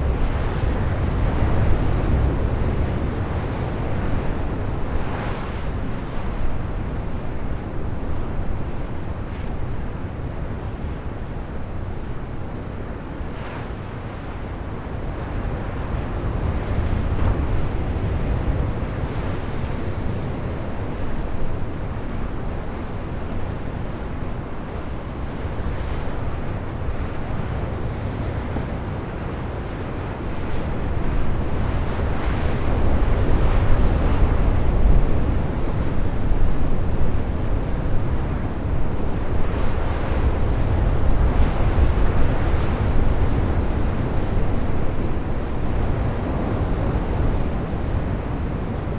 Ploumanach, Lighthouse - Heavy waves crushing into rocks
La mer est souvent agité au phare de Ploumanac'h. Les vagues sont assourdissantes.
At the Ploumanach lighthouse pretty wild waves crush into the rocks.
Getting closer is dangerous.
/Oktava mk012 ORTF & SD mixpre & Zoom h4n